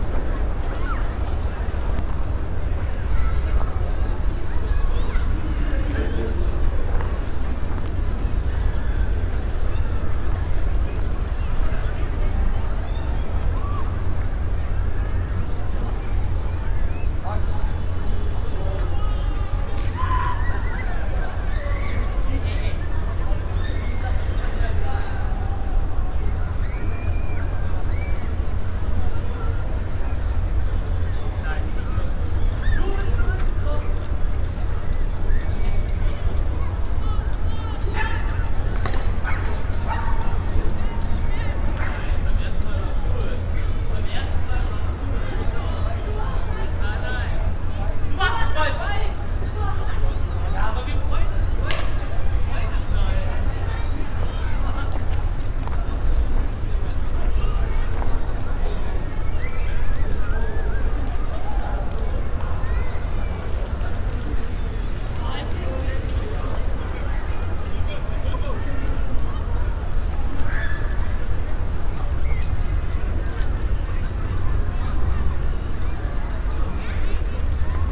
A group of young people under the bridge, steps and kicking sounds some bottles
soundmap nrw: social ambiences/ listen to the people in & outdoor topographic field recordings
oberkassel, under rhine bridge, 19 June 2009